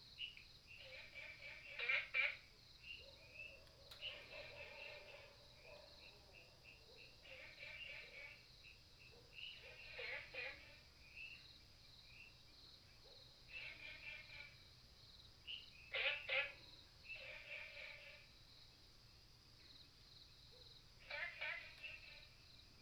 {"title": "綠屋民宿, 桃米生態村 - Frogs sound", "date": "2015-04-28 22:19:00", "description": "Frogs sound, at the Hostel", "latitude": "23.94", "longitude": "120.92", "altitude": "495", "timezone": "Asia/Taipei"}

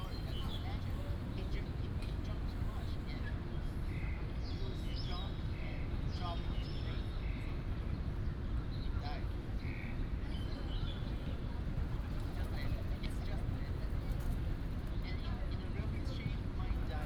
{"title": "National Taiwan University, Taipei City - Next to the ecological pool", "date": "2016-03-04 16:30:00", "description": "At the university, Bird sounds, Goose calls, pigeon", "latitude": "25.02", "longitude": "121.54", "altitude": "12", "timezone": "Asia/Taipei"}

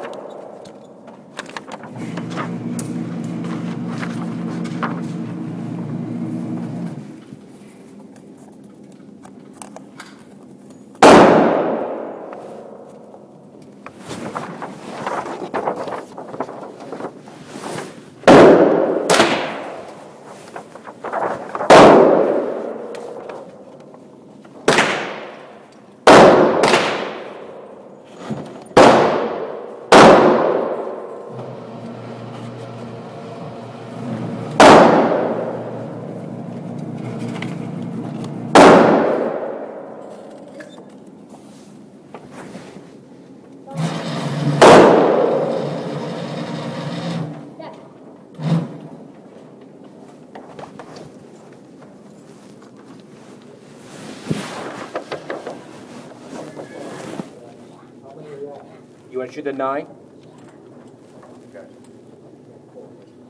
{"title": "San Rafael, CA, USA - Shooting Range on Super Bowl Sunday", "date": "2012-02-05 01:00:00", "description": "Various handguns being shot in an indoor shooting range, mostly .40 and .45 caliber semi-automatic handguns. There were 3 or 4 shooting lanes in use at the time, so there is a lot of overlap of the various guns.", "latitude": "37.96", "longitude": "-122.51", "altitude": "1", "timezone": "America/Los_Angeles"}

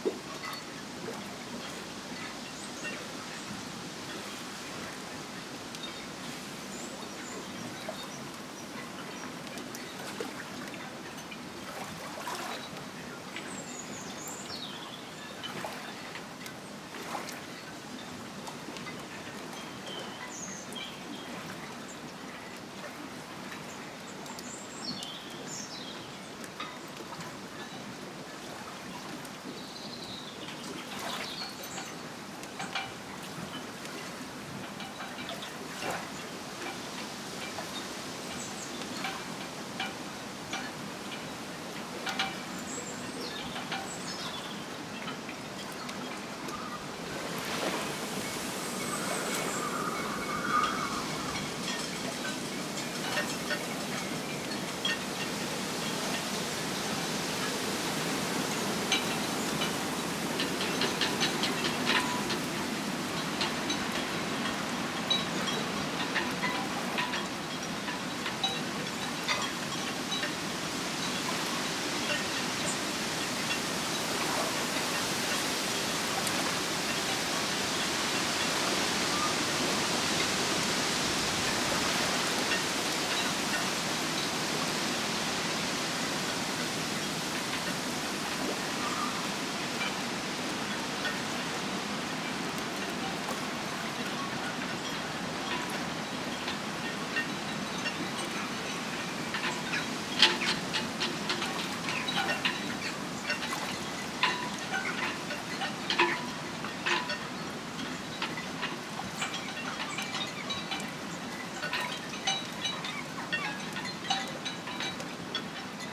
{"title": "Another Place, Penrith, UK - Harbour sounds", "date": "2020-09-11 06:52:00", "description": "Recorded with LOM Mikro USI's and Sony PCM-A10.", "latitude": "54.60", "longitude": "-2.85", "altitude": "149", "timezone": "Europe/London"}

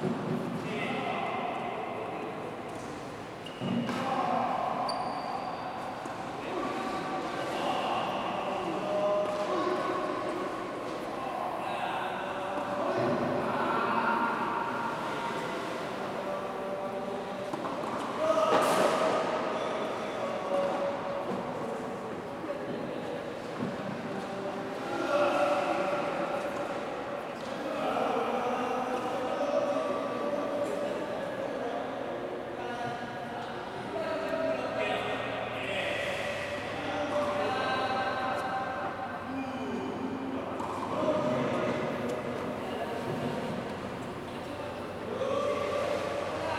{"title": "대한민국 서울특별시 양재동 시민의숲 - Yangjae Citizens Forest, Indoor Tennis Court", "date": "2019-10-23 22:11:00", "description": "Yangjae Citizens Forest, Indoor Tennis Court\n양재시민의숲 실내테니스장", "latitude": "37.47", "longitude": "127.04", "altitude": "22", "timezone": "Asia/Seoul"}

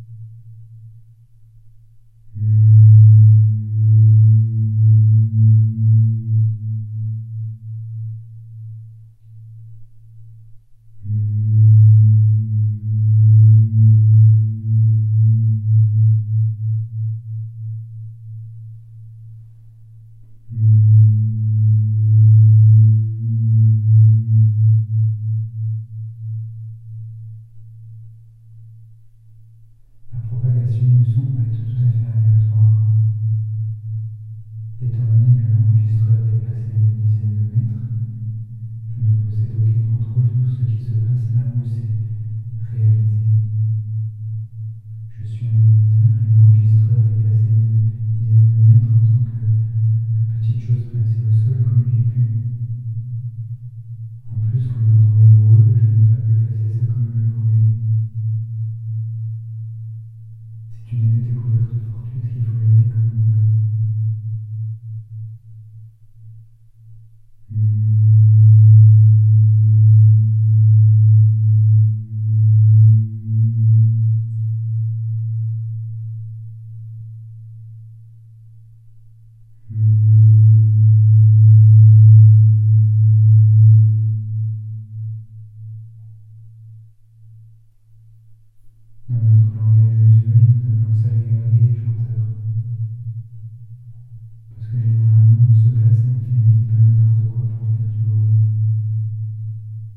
{"title": "Vielsalm, Belgique - Reverb in a mine tunnel", "date": "2018-10-06 08:30:00", "description": "/!\\ Be careful, extra loud sound /!\\ Into an underground mine, I discovered a round tunnel. This one has an evocative reverb. When talking into the tunnel, it produces loud reverb on the walls and the ceiling. I'm talking and saying uninteresting sentences, it's only in aim to produce the curious sound. It's very near to be impossible to understand what I say, the sound is distorted, the low-pitched frequencies are reinforced.", "latitude": "50.27", "longitude": "5.90", "altitude": "521", "timezone": "Europe/Brussels"}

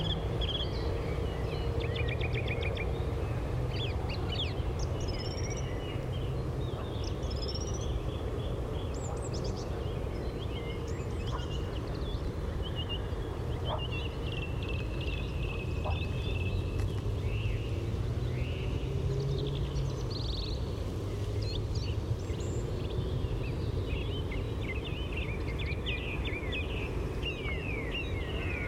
{"title": "Broekkade, Schiedam, Netherlands - Trains, frogs, birds", "date": "2021-06-01 21:40:00", "description": "Recorded with Dodotronic parabolic dish.", "latitude": "51.94", "longitude": "4.39", "timezone": "Europe/Amsterdam"}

